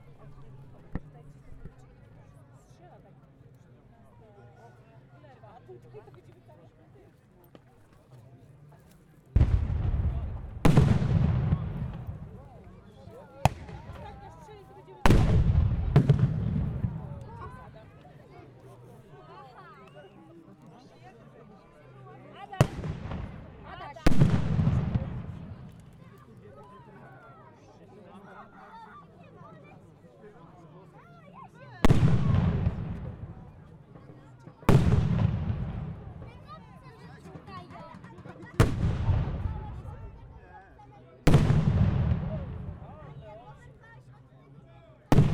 Lidzbark Warmiński, Bishops Castle, Battle - Napoleon's battle (part 2)
The biggest battle of Napoleon's east campaigne which took place in Warmia region (former East Preussia).